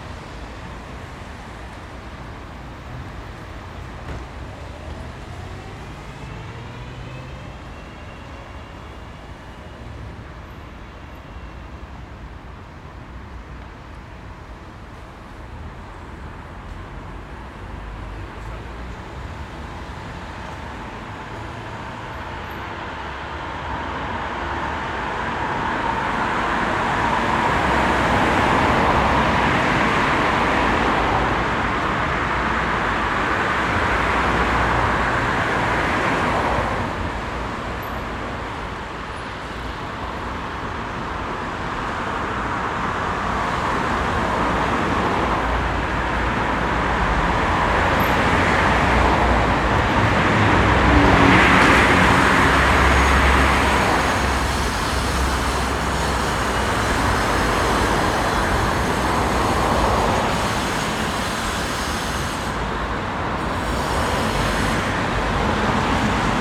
Rotterdam, s Gravendijkwal, Rotterdam, Netherlands - s Gravendijkwal
Recording of the s Gravendijkwal´s tunnel. Cars of different types and sizes. Recorded with zoom H8
Zuid-Holland, Nederland